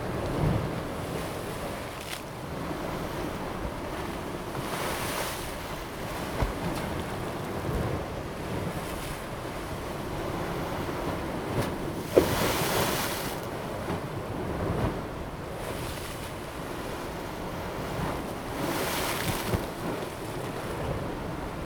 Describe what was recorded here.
Seawater impact pier, Seawater high tide time, Small pier, Zoom H2n MS+XY